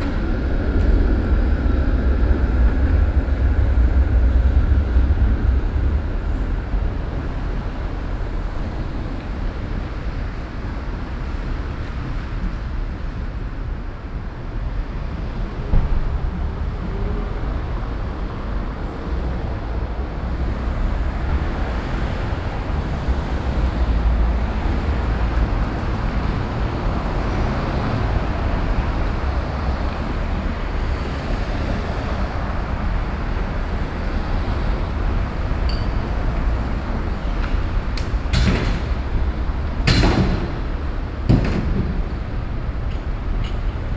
Brussels, Rue du Bailly / Parvis de la Trinité.
Bells, birds, trams and unfortunately too many cars.
Ixelles, Belgium, 13 May 2011